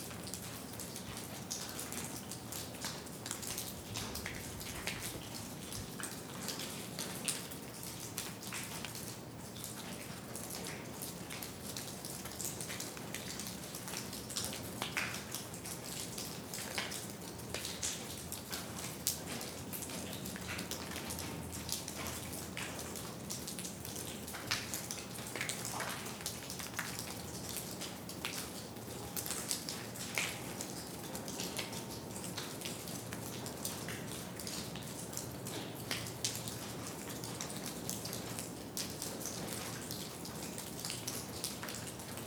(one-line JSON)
{"title": "wülfrath, hammerstein, im zeittunnel, ausgang", "date": "2008-06-24 22:53:00", "description": "frühjahr 07 morgens - regenwassser einlauf im \"zeittunel\" nahe dem grubenausgang - hier ohne exponate\nproject: :resonanzen - neandereland soundmap nrw - sound in public spaces - in & outdoor nearfield recordings", "latitude": "51.29", "longitude": "7.05", "altitude": "200", "timezone": "Europe/Berlin"}